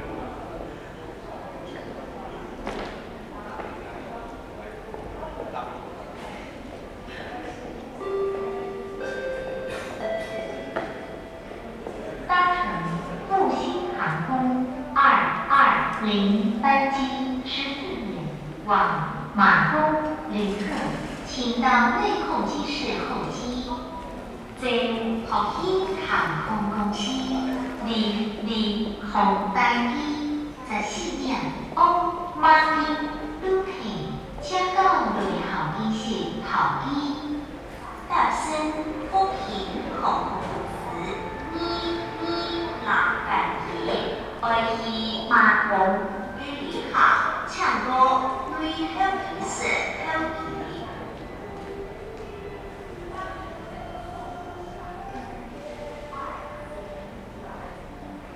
Siaogang, Kaohsiung - Kaohsiung International Airport
1 February 2012, 13:48